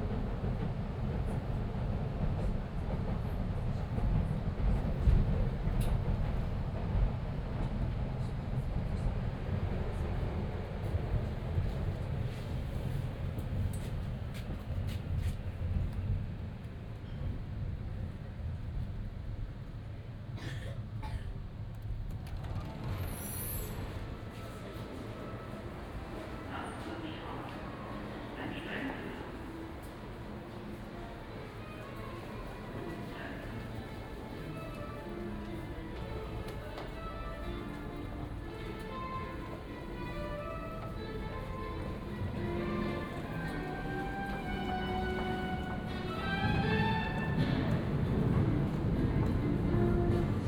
Möckernbrücke, Berlin - crossing the station
part of a soundwalk from neukölln to kreuzberg, station Möckernbrücke, musicians, passengers, steps, leaving the station at the south side, Tempelhofer Ufer
Berlin, Germany